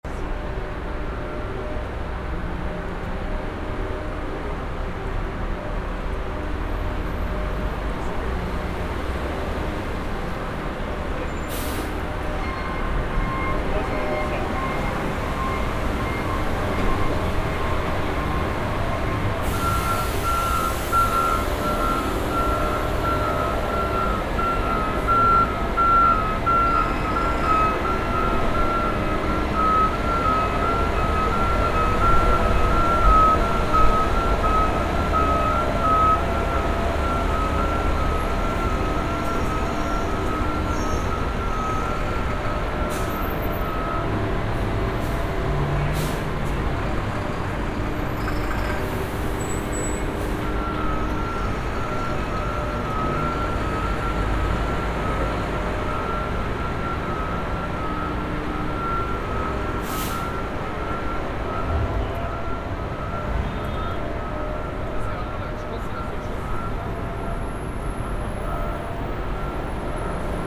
Until not too long ago, this part of Manhattan was a mega construction site
United States, 27 March 2014, 14:32